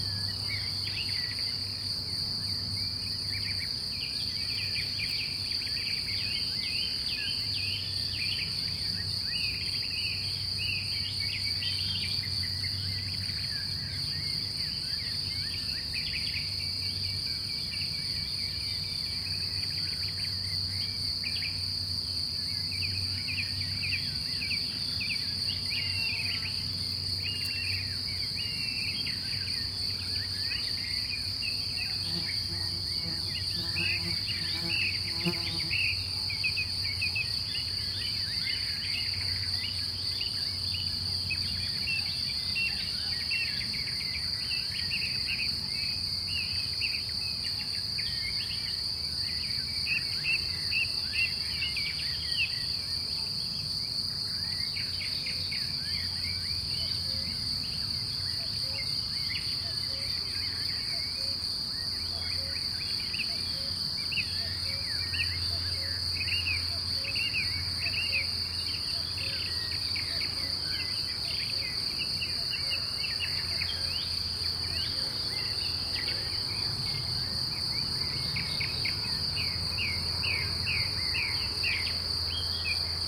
Parterre de grillons et orchestre d'oiseaux au printemps en Chautagne.
France métropolitaine, France, 10 May